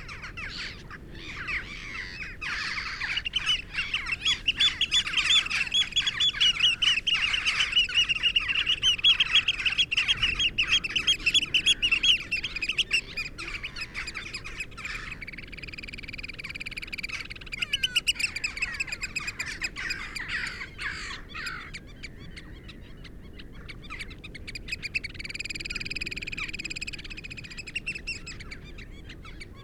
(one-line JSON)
{"title": "Budle Cottages, Bamburgh, UK - inlet soundscape ...", "date": "2019-11-02 07:06:00", "description": "inlet soundscape ... small patch of sand visited by various flocks before they disperse along the coast ... bird calls from ... jackdaw ... crow ... rook ... black-headed gull ... common gull ... curlew ... dunlin ... oystercatcher ... wren ... parabolic ... background noise ...", "latitude": "55.61", "longitude": "-1.76", "altitude": "1", "timezone": "Europe/London"}